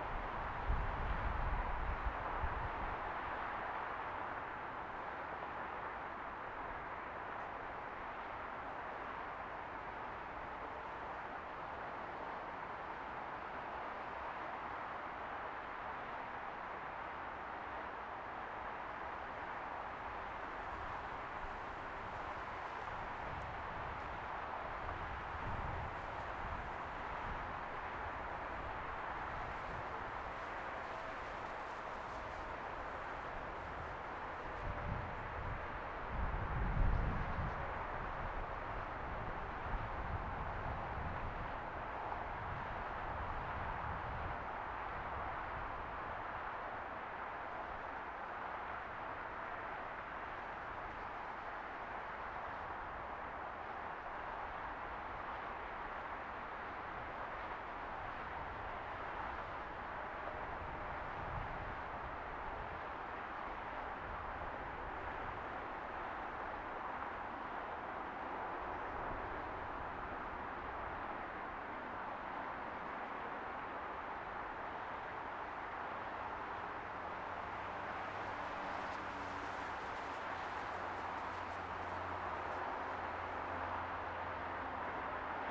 Arriving aircraft landing on runway 12L at Minneapolis/St Paul International Airport recorded from the parking lot at Bossen Park
Hennepin County, Minnesota, United States, January 2022